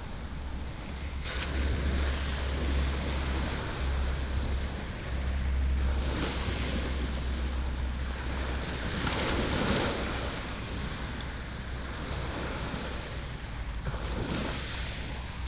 Fangshan Township, Pingtung County - Sound of the waves
Sound of the waves, at the beach, traffic sound
Pingtung County, Taiwan, 24 April, ~10:00